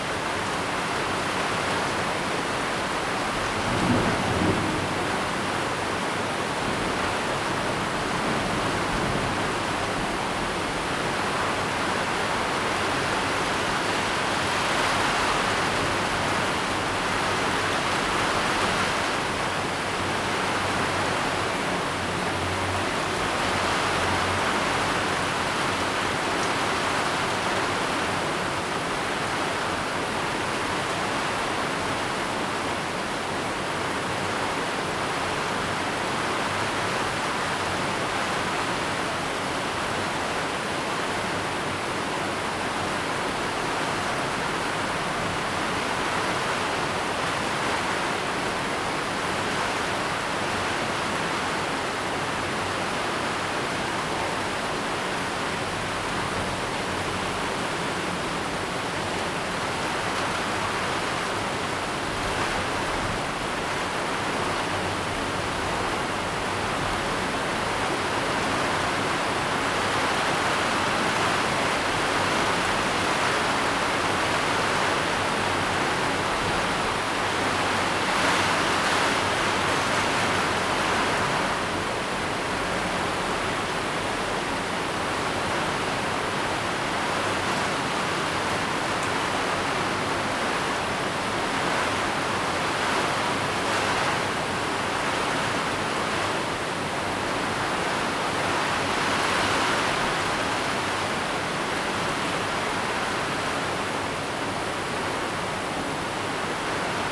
thunderstorm, Traffic Sound
Zoom H2n MS+XY